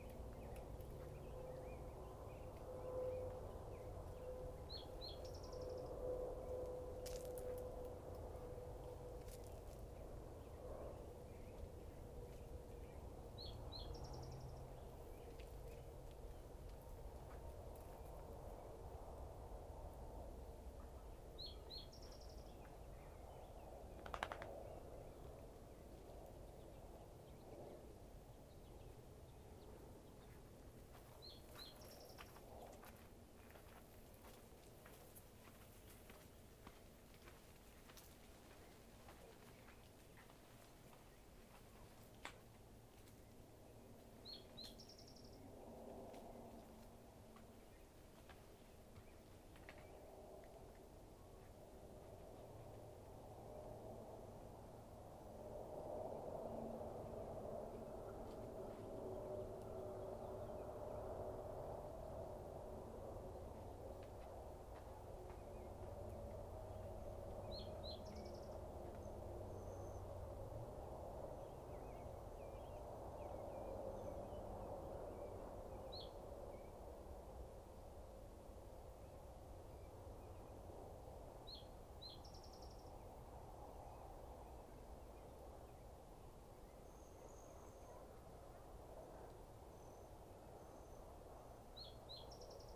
{
  "date": "2018-06-25 19:06:00",
  "description": "chinchilla listening/recording. recorded on a zoom h4n pro handy recorder",
  "latitude": "35.57",
  "longitude": "-105.76",
  "altitude": "2256",
  "timezone": "America/Denver"
}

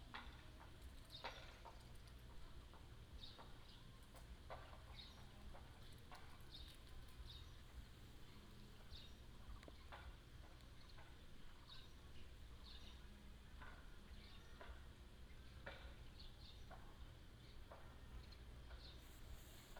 {
  "title": "Taiban, Daren Township, Taitung County - Beside the tribe primary school",
  "date": "2018-04-13 13:55:00",
  "description": "Beside the tribe primary school, Construction sound, School bell, Bird cry, Footsteps, Gecko call\nBinaural recordings, Sony PCM D100+ Soundman OKM II",
  "latitude": "22.48",
  "longitude": "120.91",
  "altitude": "224",
  "timezone": "Asia/Taipei"
}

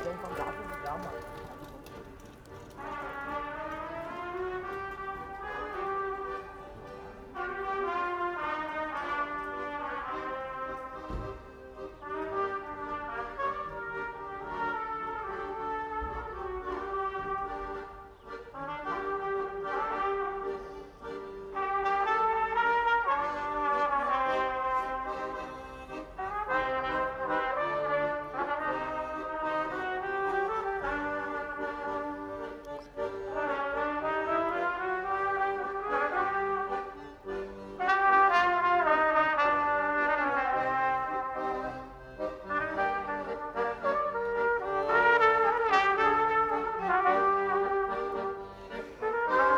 {
  "title": "Sweet trumpets in a quiet street",
  "date": "2011-09-24 11:27:00",
  "description": "Three musicians, two trumpeters and one accordionist walk slowly along the cobbled streets of Moabit in the morning sun.",
  "latitude": "52.53",
  "longitude": "13.33",
  "altitude": "39",
  "timezone": "Europe/Berlin"
}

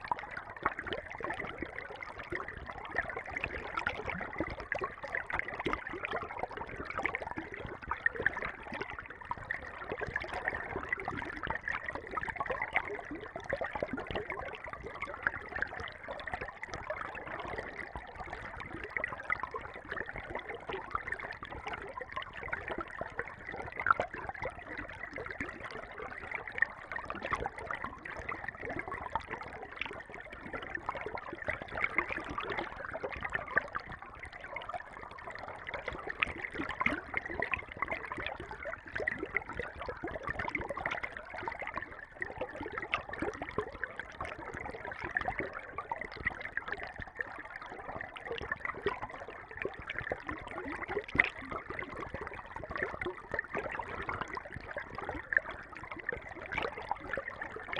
{
  "title": "River Urslau, Hinterthal, Austria - River Urslau (hydrophone recording)",
  "date": "2015-07-21 14:00:00",
  "description": "Stereo hydrophones in the lovely clear, cold, shallow River Urslau. The very next day this was a muddy torrent after storms in the mountains. Recorded with JrF hydrophones and Tascam DR-680mkII recorder.",
  "latitude": "47.41",
  "longitude": "12.97",
  "altitude": "998",
  "timezone": "Europe/Vienna"
}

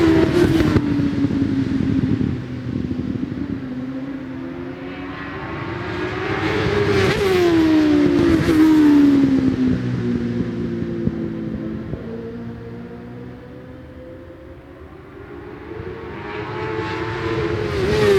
{
  "title": "West Kingsdown, UK - british superbikes 2002 ... superstock ...",
  "date": "2002-06-15 15:00:00",
  "description": "british superbikes 2002 ... superstock second qualifying ... one point stereo mic to minidisk ...",
  "latitude": "51.35",
  "longitude": "0.26",
  "altitude": "152",
  "timezone": "Europe/London"
}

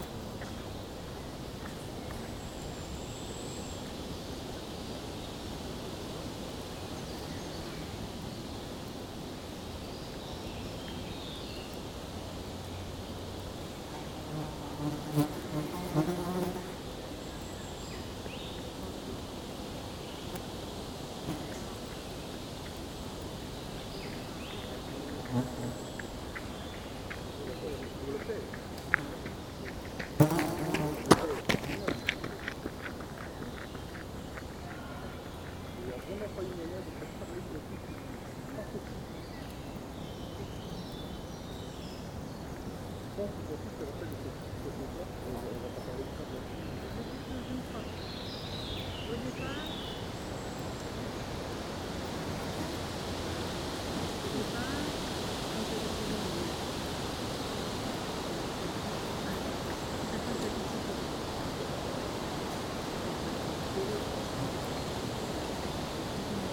{
  "title": "Ottignies-Louvain-la-Neuve, Belgique - One hour in the crazy life of a dung",
  "date": "2017-05-25 15:10:00",
  "description": "Process is simple. I was walking in the Lauzelle forest. I found the place uninteresting mainly because of the quite crowded people here, and also the motorway far distant noise. But, wind in the trees was beautiful. I encontered an horse and... a big dung fall onto the ground. The flies went immedialtly on it. I put the two microphones into the hot poop and all was made, that's all I can say. It's like that, on a hot and lazy public holiday, walkers saw a stupid guy recording a dung during an hour !",
  "latitude": "50.68",
  "longitude": "4.60",
  "altitude": "127",
  "timezone": "Europe/Brussels"
}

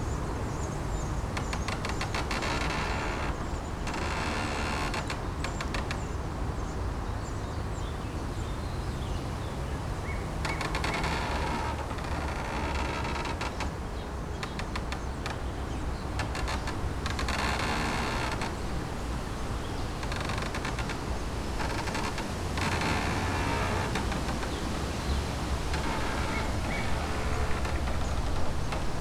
{
  "title": "Lithuania, Utena, wind and tree",
  "date": "2013-05-27 16:00:00",
  "latitude": "55.51",
  "longitude": "25.60",
  "altitude": "102",
  "timezone": "Europe/Vilnius"
}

